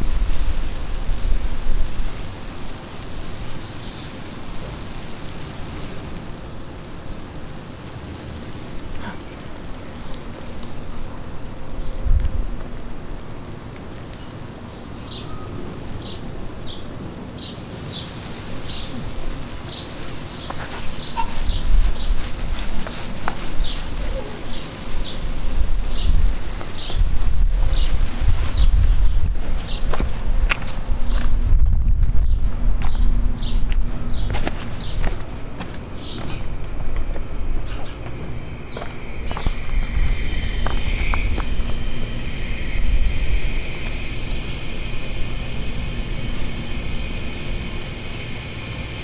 Palmer Square, Chicago, IL, USA - Sprinkler in Backyard
Hot, summertime, sprinkler in backyard, dad & 2-1/2 year old girl playing hide & seek... birds, cta train, air conditioner.